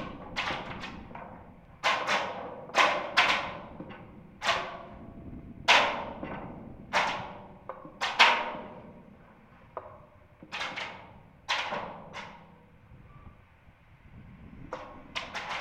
{"title": "Traffic island, road, Reading, UK - Clanging wires inside a lamp post", "date": "2015-01-12 11:03:00", "description": "On several occasions I'd noticed that the cables inside a lamp post on the A33 clang interestingly in the wind. It is as though long cables travel inside the lamp-post, and clang and swish around in high winds... it's quite a subtle sound and because it's on a dual carriageway, bordered on each side by dense, fast-moving traffic, I thought that isolating the sound from the environment by using a contact microphone might better help me to hear it. In this recording I attached a contact microphone to the lamp post with blu-tack and recorded in mono to my EDIROL R-09. I think it's amazing - you can really hear the wires twisting about inside the lamp-post, and whipping in the wind. I recorded from outside too, so you can hear the contrast, but I love knowing that this sound is happening whenever there is wind. I also love that it seems like a mistake - none of the other tall lamp posts make this sound, so maybe something isn't secured.", "latitude": "51.44", "longitude": "-0.98", "altitude": "38", "timezone": "Europe/London"}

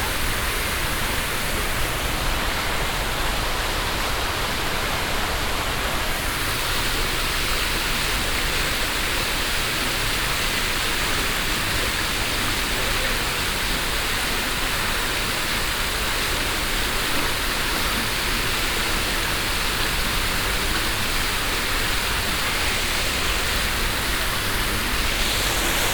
{"title": "paris, rue de innocents, fountain", "description": "big old sparkling sculpture fountain on square place\ninternational cityscapes - social ambiences and topographic field recordings", "latitude": "48.86", "longitude": "2.35", "altitude": "40", "timezone": "Europe/Berlin"}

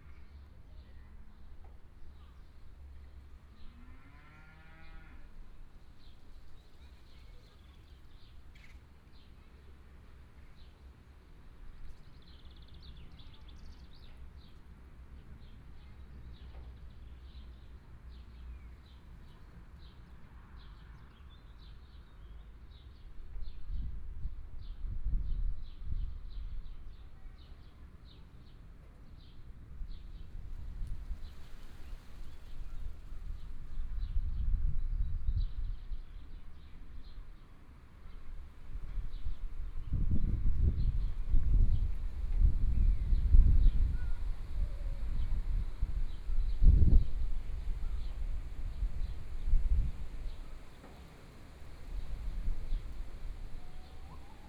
{"title": "Eichethof, Hohenkammer, Deutschland - In the farm", "date": "2014-05-11 15:24:00", "description": "In the farm", "latitude": "48.42", "longitude": "11.53", "altitude": "512", "timezone": "Europe/Berlin"}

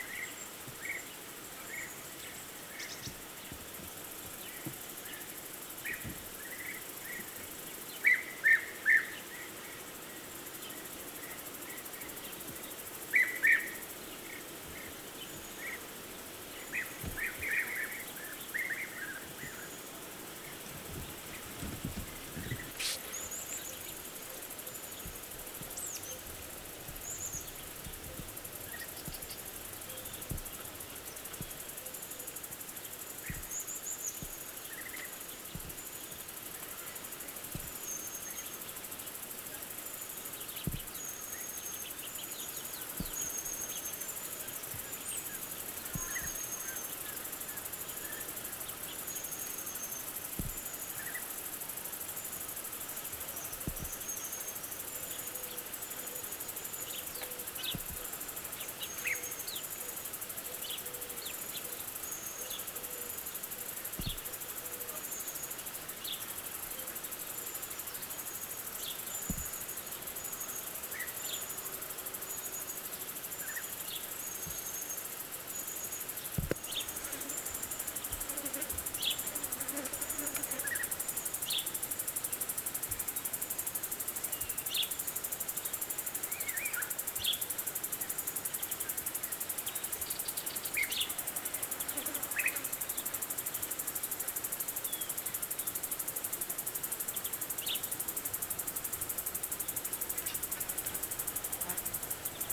{"title": "São Lourenço, Portugal - Arrábida by day", "date": "2006-08-02 14:20:00", "description": "Arrábida during the day, cicadas, birds, distance traffic. DAT recording (DAP1) + MS setup (AKG C91/94)", "latitude": "38.48", "longitude": "-8.99", "altitude": "236", "timezone": "Europe/Lisbon"}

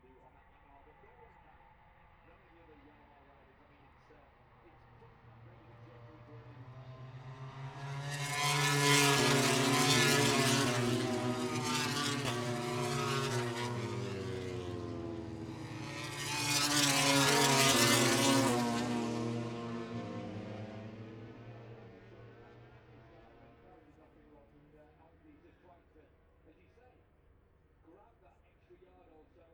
{"title": "Silverstone Circuit, Towcester, UK - british motorcycle grand prix 2022 ... moto grand prix ... ...", "date": "2022-08-06 14:10:00", "description": "british motorcycle grand prix 2022 ... moto grand prix qualifying one ... outside of copse ... dpa 4060s clipped to bag to zoom h5 ...", "latitude": "52.08", "longitude": "-1.01", "altitude": "158", "timezone": "Europe/London"}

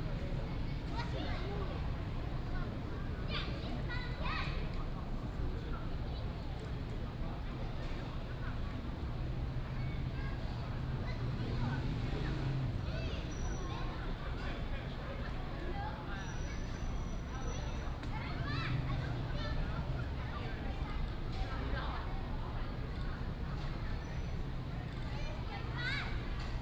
{"title": "Yongkang Park, Da'an Dist. - in the Park", "date": "2015-07-02 20:31:00", "description": "in the Park", "latitude": "25.03", "longitude": "121.53", "altitude": "14", "timezone": "Asia/Taipei"}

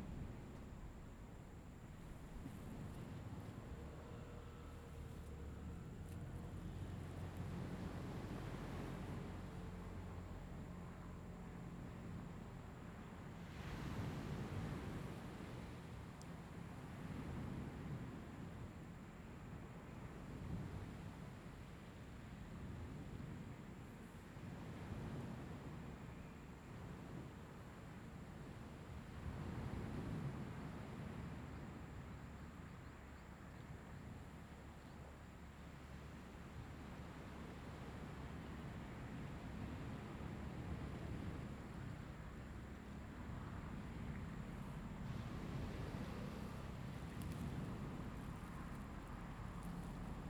{"title": "Jiayo, Koto island - sound of the waves", "date": "2014-10-30 08:14:00", "description": "In the beach, Sound of the waves", "latitude": "22.05", "longitude": "121.52", "altitude": "10", "timezone": "Asia/Taipei"}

